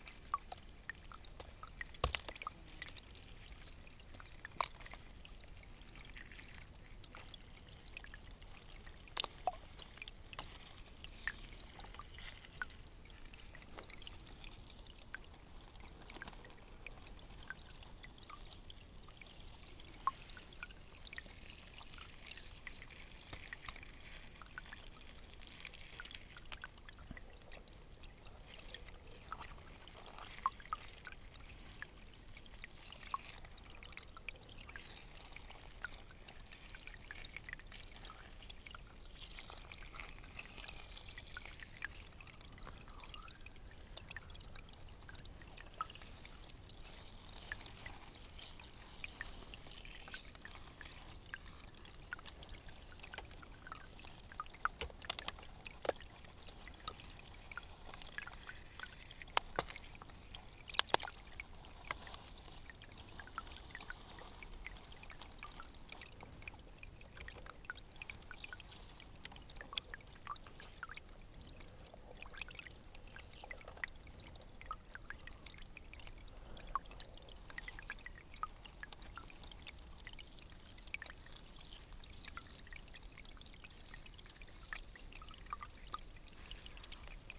stream below feyssac - dripping KODAMA improvisation
KODAMA improvised recording near a stream below the village of Feyssac